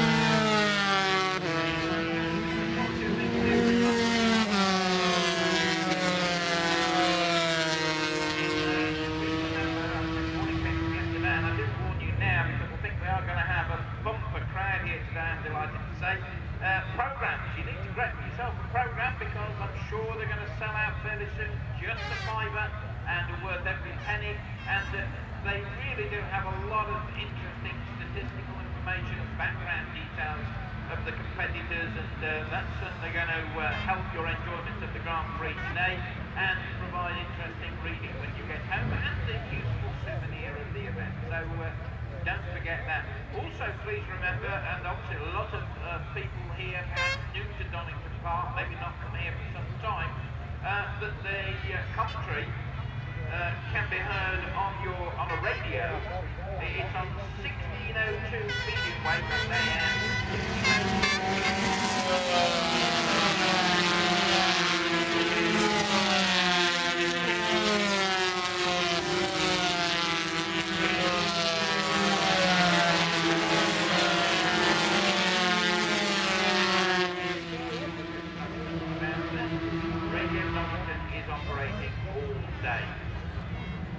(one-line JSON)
{"title": "Castle Donington, UK - British Motorcycle Grand Prix 2002 ... 125 ...", "date": "2002-07-14 09:00:00", "description": "125cc motorcycle warm up ... Starkeys ... Donington Park ... warm up and all associated noise ... Sony ECM 959 one point stereo mic to Sony Minidisk ...", "latitude": "52.83", "longitude": "-1.37", "altitude": "81", "timezone": "Europe/Berlin"}